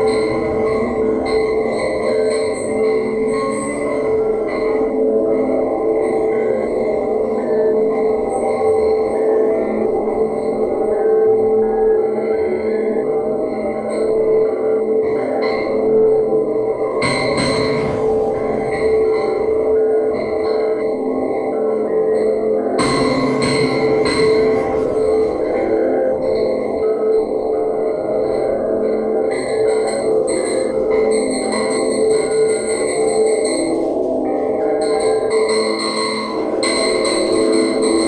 R. Marquês de Ávila e Bolama, Covilhã, Portugal - Antenna dystopian feed
Workshop Criação de paisagens sonoras para documentário
21 March 2018, 17:47